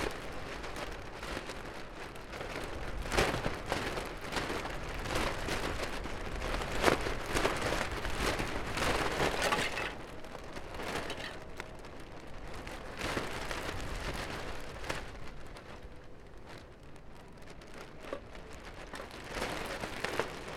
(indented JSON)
{
  "title": "Športni park, Nova Gorica, Slovenija - Ena vrečka vetra in smeti za ob tenisu",
  "date": "2017-06-07 13:03:00",
  "description": "A trash bag having fun with the wind.",
  "latitude": "45.96",
  "longitude": "13.64",
  "altitude": "90",
  "timezone": "Europe/Ljubljana"
}